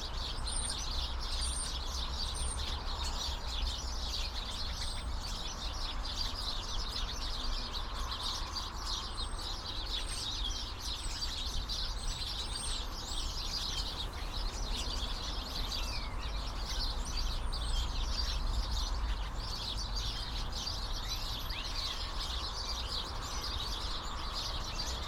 Lewes, UK - Starling Roost
A mixture of Starlings and House Sparrows can be heard in several bushes along this path chattering away as the sun starts to set. On one side of the path is the River Ouse and the other a Tesco car park.
Tascam DR-05 with wind muff wedged into bushes. Can also hear traffic on the A2029 Phoenix Causeway and a number of people walking by.
February 2017